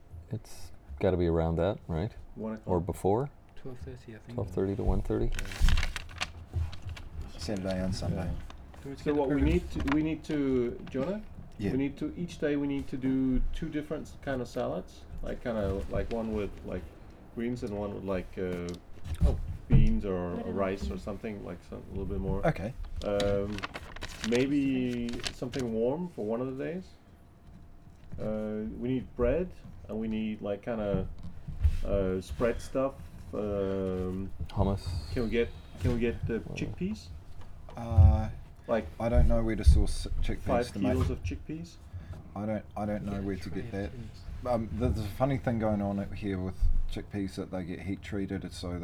neoscenes: preparing for the ADA food
Wanganui East, New Zealand, 6 December 2010, 20:22